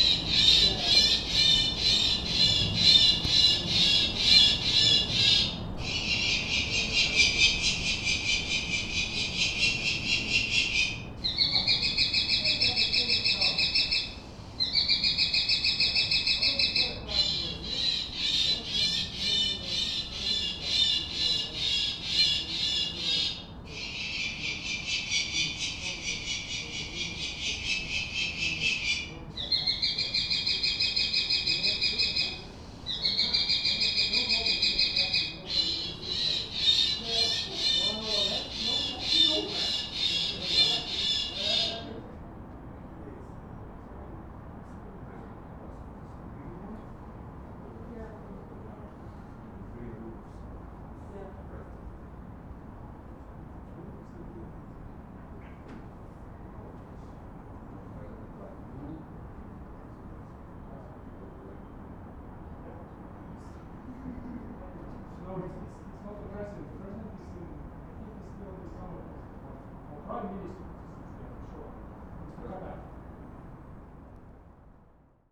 Lithuania, Vilnius, belfry of Sv. Jonas Church
there's a viewpoint on belfry of Sv. Jonas church (45 meters in height) to watch the city's panorama...and here's a soundscape from this height.